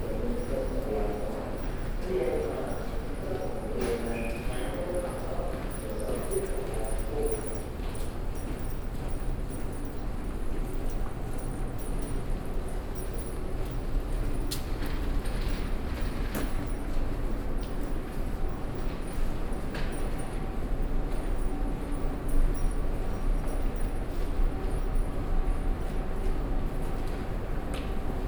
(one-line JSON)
{"title": "Station West entrance, Hamm, Germany - Station walk-through W to front entrance", "date": "2020-04-01 17:06:00", "description": "walking from outside West entrance across the station, lingering a bit in the empty hall, walking out front entrance…", "latitude": "51.68", "longitude": "7.81", "altitude": "62", "timezone": "Europe/Berlin"}